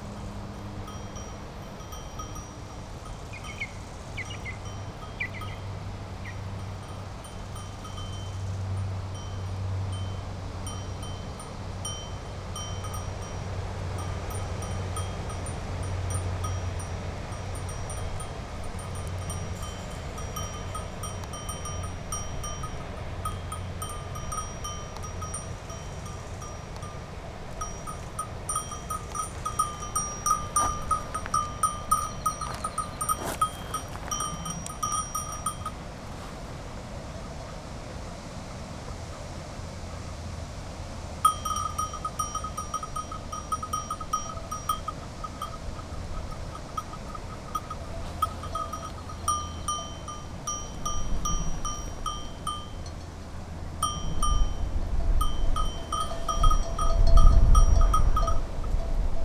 Monteils, Sheep and Goats
The noise is not due to the manipulation noise but the animals walking near the microphone.